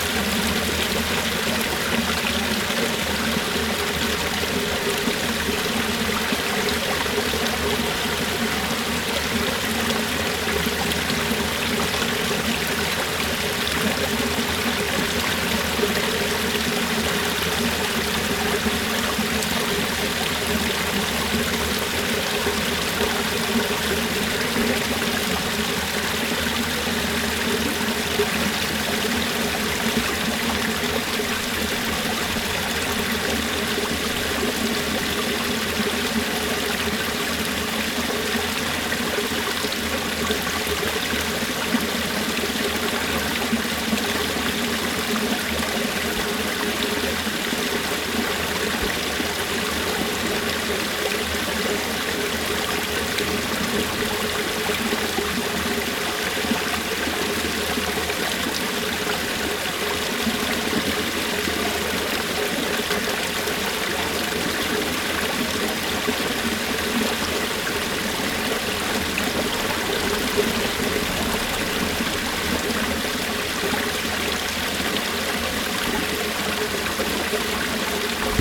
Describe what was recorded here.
Nach einem starken Regen läuft das Wasser vom Parkplatz am Bahnhof Porz in einen Gully. / After a heavy rain the water runs from the parking lot at the train station Porz in a gully.